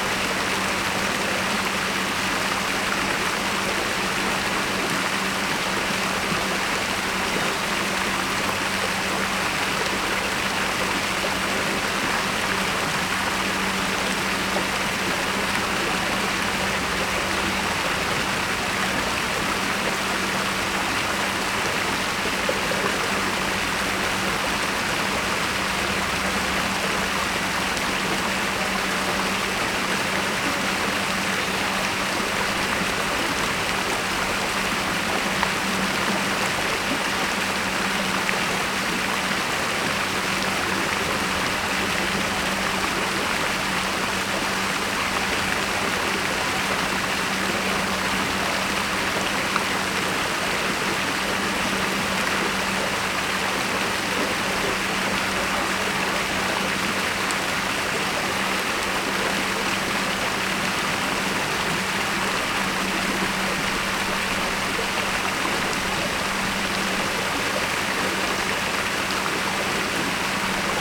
Bassin square Fleuriot de l'Angle (2)
Square Fleuriot de lAngle à Nantes ( 44 - France )
Bassin jet horizontal